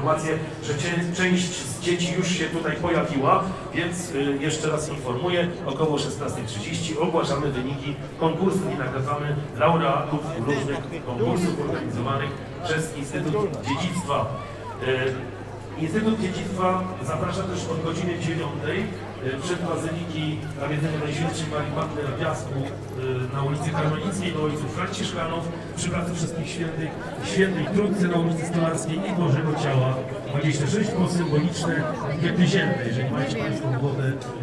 Soundwalk along ul. Mikołajska from Rynek Główny (Main Square) to Mały Rynek, a local Pierogi Festival happening there.